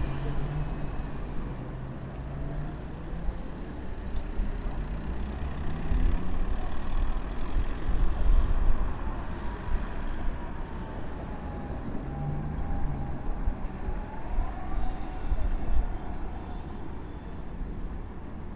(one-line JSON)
{"title": "Santa Barbara, Lviv, Lvivska oblast, Ukraine - Cars and people on Sunday morning during covid lockdown", "date": "2020-04-12 09:10:00", "description": "This is a week before Easter in the eastern church, and the Easter in the western church. There are some people walking around in masks, cars passing by. Light wind.\nRecorded using ZOOM H1 with a self-made \"dead cat\".", "latitude": "49.78", "longitude": "24.06", "altitude": "338", "timezone": "Europe/Kiev"}